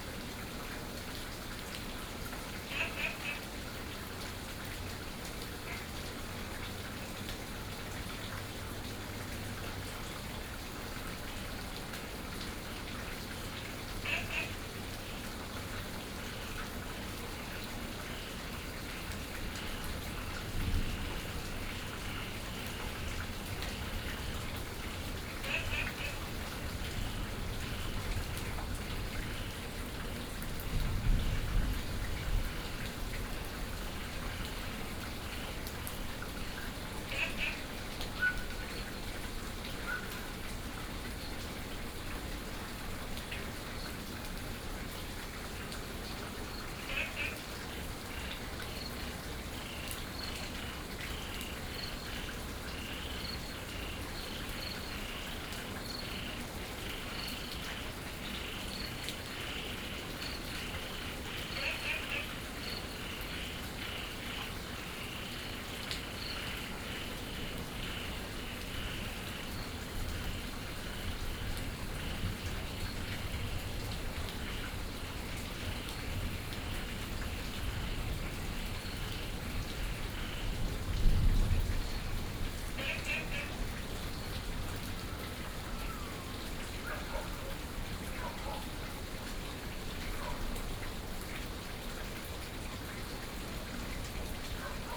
樹蛙亭, 埔里鎮桃米里 - After the thunderstorm
Frogs chirping, After the thunderstorm, Insects sounds, Dogs barking